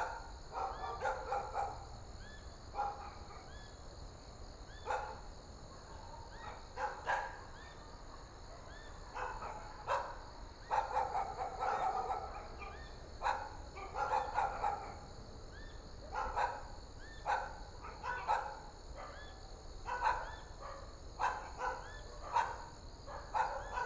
The park at night, Birds singing, Dogs barking, The distant sound of traffic and Sound of the waves, Zoom H6 M/S
Taitung City, 花東海岸公路, 17 January, ~18:00